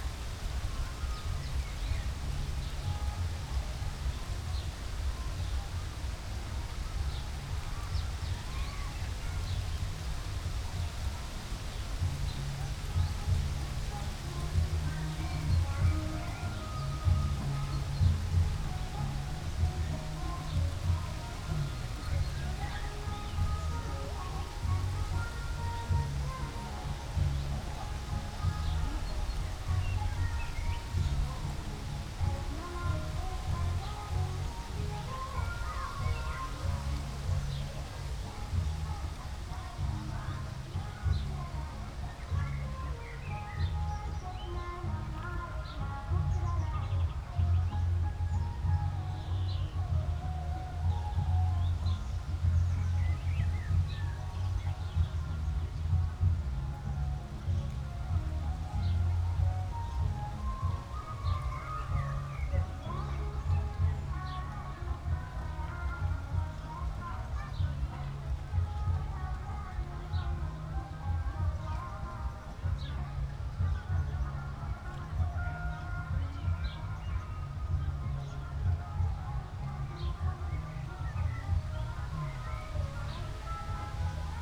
the soundscape today is dominated by distant music from Karneval der Kulturen, and some wind
(Sony PCM D50, Primo EM172)
Berlin, Germany